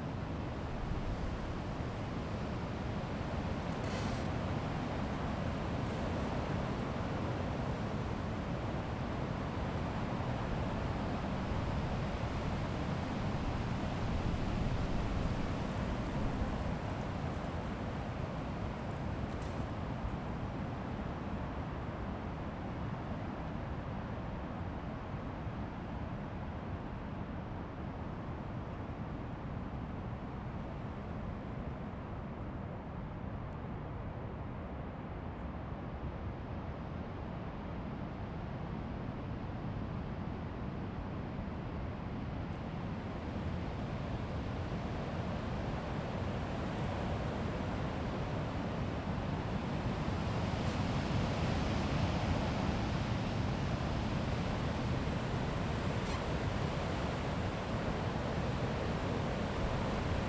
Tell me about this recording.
Ein kalte Wind blähst durch eine Baumgruppe. November 1998